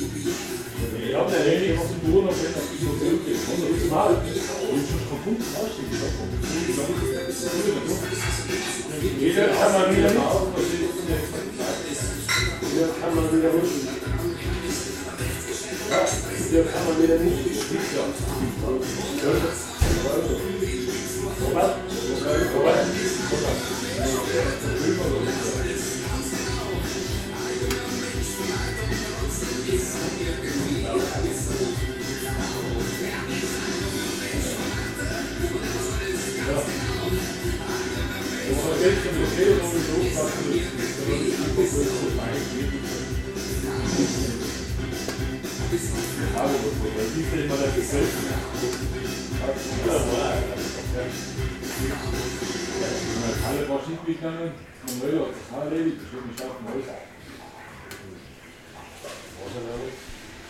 jahnstub'n, jahnstr. 37, 6020 innsbruck
Innsbruck, Austria, 27 December